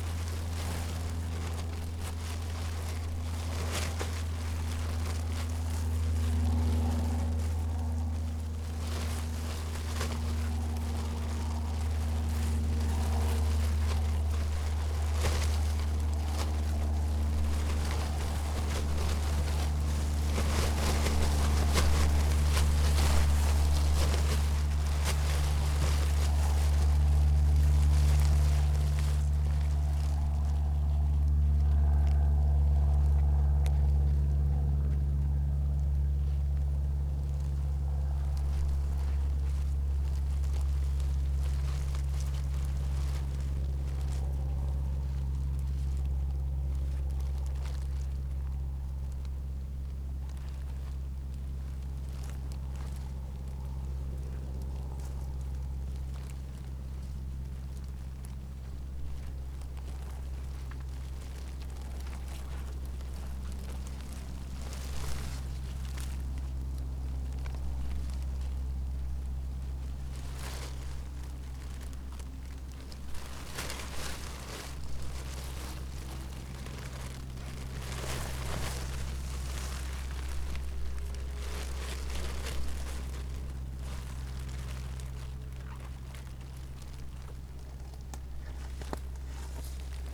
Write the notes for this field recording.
sound debris in a nature: cellophane in the wind and a plane in the sky...